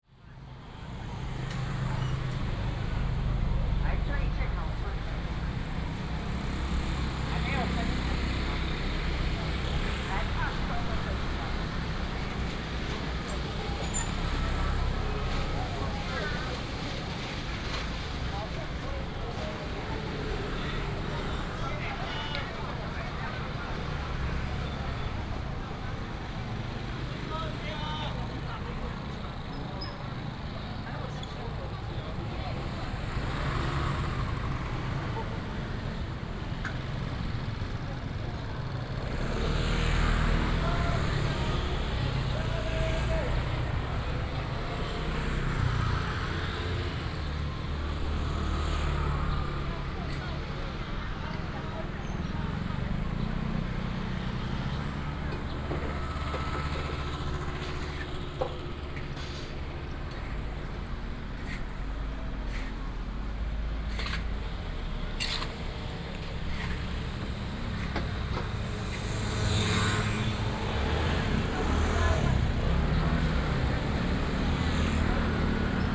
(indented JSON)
{
  "title": "Zhongzheng Rd., Huwei Township - Walking in the market",
  "date": "2017-03-03 09:59:00",
  "description": "Walking in the market, motorcycle, Vendors",
  "latitude": "23.71",
  "longitude": "120.44",
  "altitude": "33",
  "timezone": "Asia/Taipei"
}